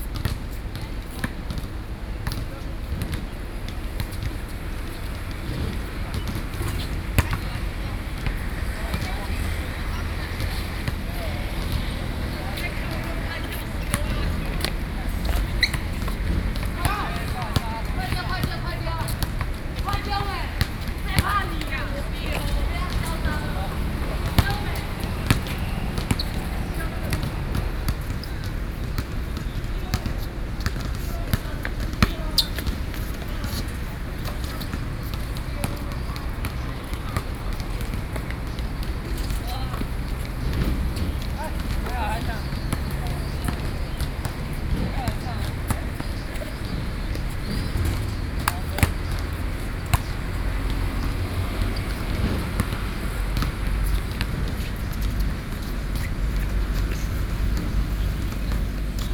Denglin Rd., Wugu Dist., New Taipei City - Basketball court
Basketball court, Traffic Sound
Sony PCM D50+ Soundman OKM II
3 July 2012, 18:00